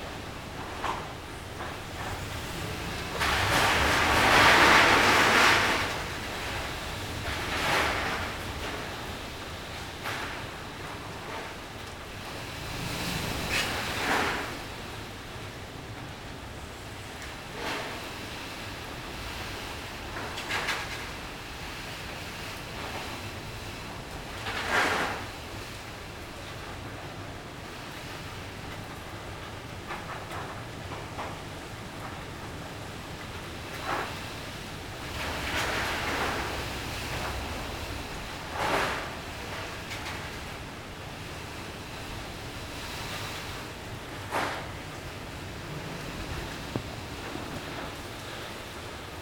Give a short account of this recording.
recorded during heavy storm on a hotel balcony. building across the street is a parking lot. There are a lot of metal sheets and wires. Wind bending the sheets and roofing of the parking lot as well as swooshing in the wires. (roland r-07)